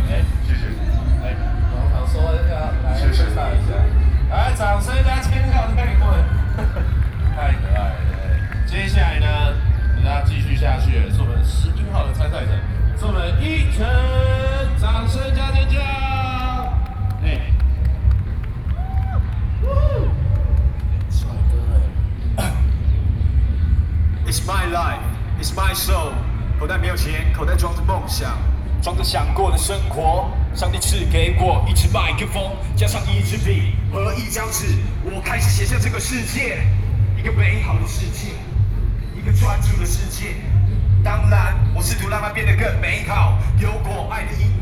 Xinpu Station, New Taipei City - Street dance competitions
New Taipei City, Taiwan